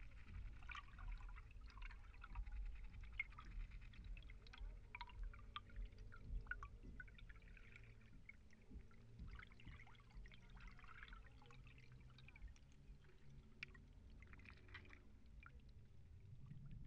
Suezkade, Den Haag - hydrophone rec in the corner next to the footbridge
Mic/Recorder: Aquarian H2A / Fostex FR-2LE
The Hague, The Netherlands